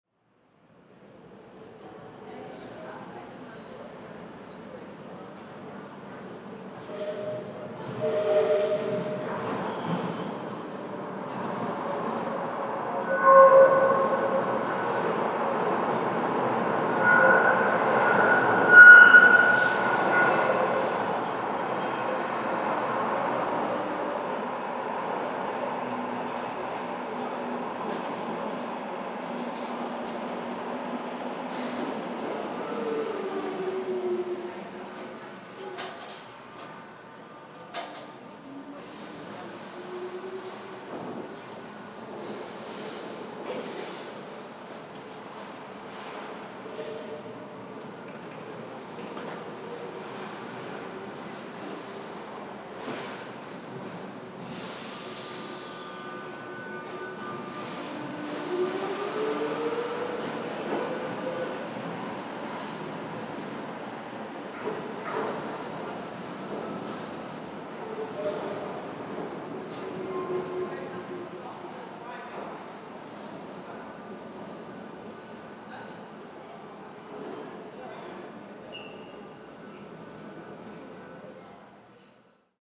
Piccadilly Train Station
Recording of a Tram inside Picadilly Train Station